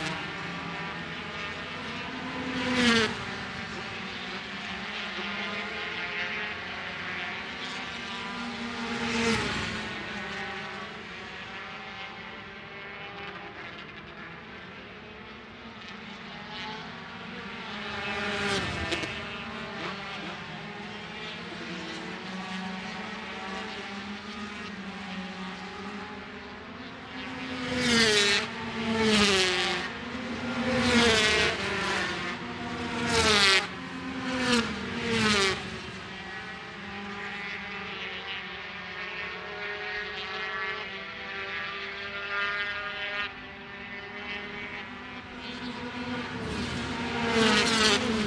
Scratchers Ln, West Kingsdown, Longfield, UK - British Superbikes 2005 ... 125 ...
British Superbikes 2005 ... 125 free practice one ... one point stereo mic to minidisk ...
26 March, 13:15